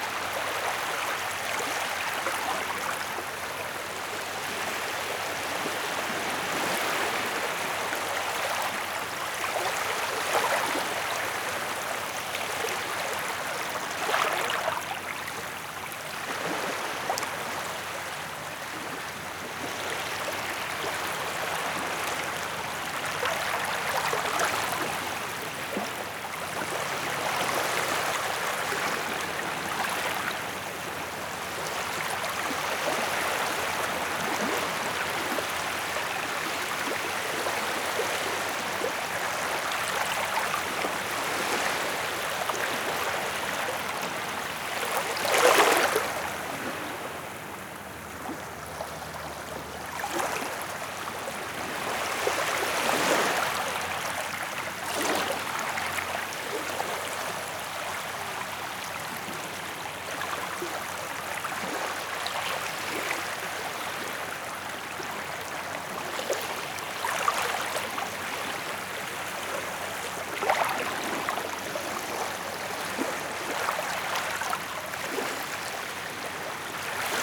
Tubkaek Beach - Close recording of small waves on the beach, in Thailand
During the night at Tubkaek Beach in Thailand, microphone very very close to the waves on the beach.
Recorded by an ORTF Setup Schoeps CCM4x2 in a Cinela Windscreen
Recorder Sound Devices 633
Sound Ref: TH-181019T01
GPS: 8.089738, 98.746327